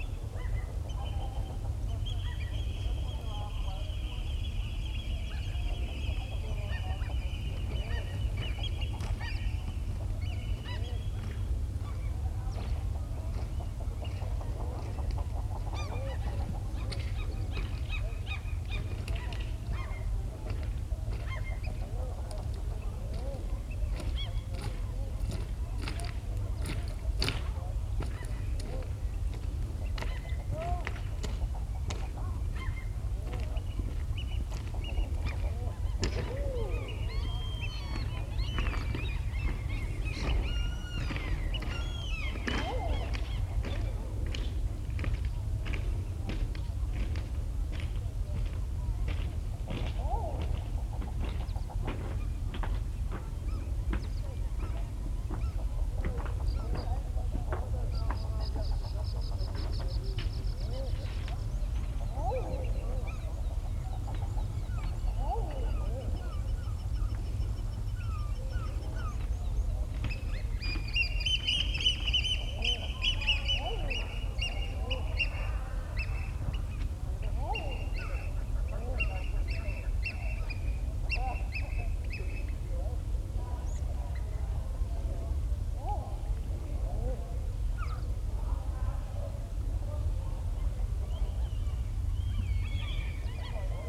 Whitepark Bay, Northern Ireland - An unbelievably calm day, springtime activity in the natural amphitheater
At this jagged promontory in the stunning Northern Ireland coastline the cliffs and bare rocks form a natural amphitheater that gives the soundscape a reverberant quality it would not have in the open. On this unbelievably warm, calm day it creates a very special atmosphere. Gulls, eider ducks, oystercatchers, rock pipits, cormorants and people all contribute. The distant shouts are an extreme sports group (Aquaholics) that leap off cliffs into the sea below. The rather sinister bass is a helicopter for wealthy tourists to see the view from above. They regularly fly over but even when on the ground the drone, 5km away, is constant and never stops.